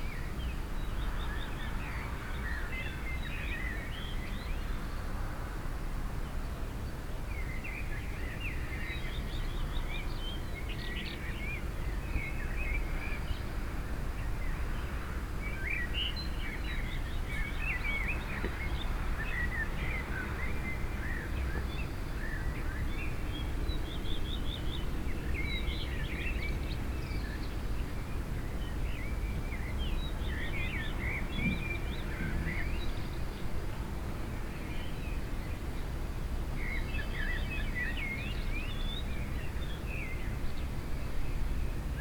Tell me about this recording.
(binaural) early morning ambience. bird chrips looping to the left, echoing off the maze of apartment buildings.